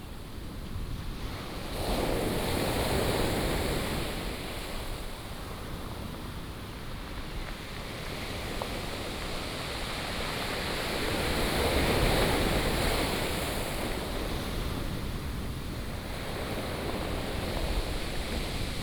Sound of the waves, traffic sound
Binaural recordings, Sony PCM D100+ Soundman OKM II
13 April 2018, 18:07